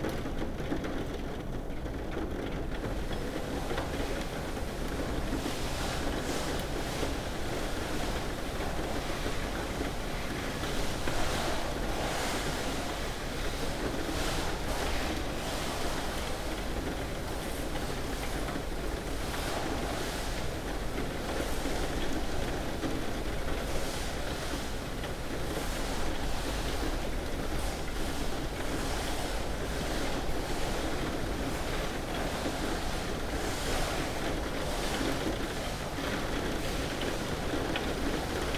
Sint-Jans-Molenbeek, Belgium - Rain on skylight, vacuuming indoors
We were having coffee downstairs when a massive thunderstorm struck outside. I put the recorder under the skylight and it recorded the last moments of the downpour, mixed with the sounds of the building being cleaned with a vacuum cleaner. The FoAM space being cleaned inside and out. Recorded just with EDIROL R-09 recorder.
België - Belgique - Belgien, European Union, 19 June 2013